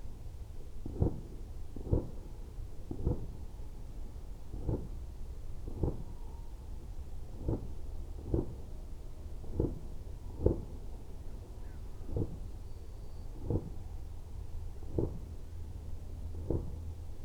Chapel Fields, Helperthorpe, Malton, UK - distant firework display ...
distant firework display ... with slightly closer tawny owl calling ... xlr SASS on tripod to Zoom F6 ... all sorts of background noise ...
5 November 2020, ~20:00